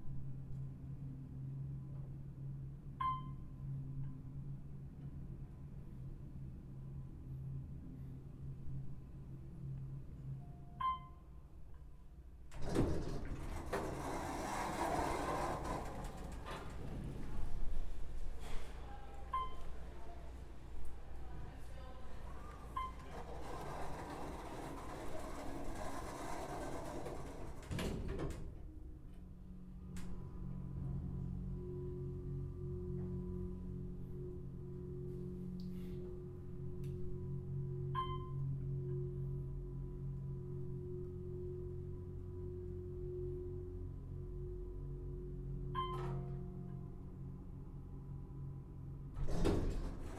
Bolton Hill, Baltimore, MD, USA - Up Lifter
Elevator in Brown Center
12 September, 13:30